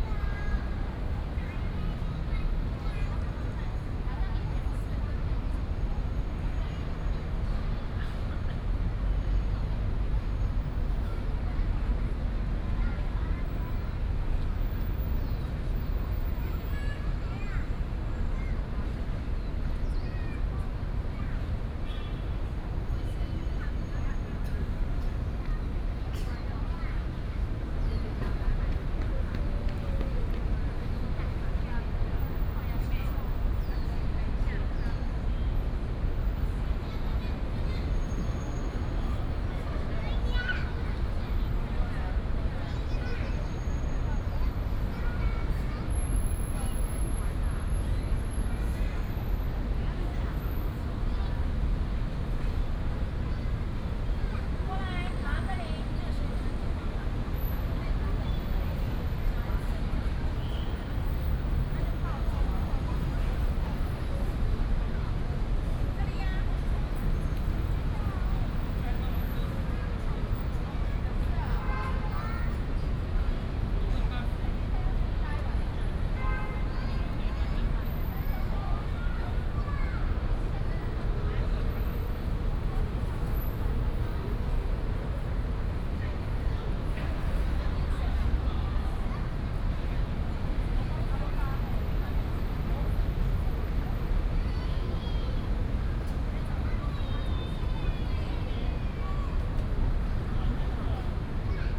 瑠公公園, Da'an District - Children's play area
Hot weather, in the Park, Traffic noise, Children's play area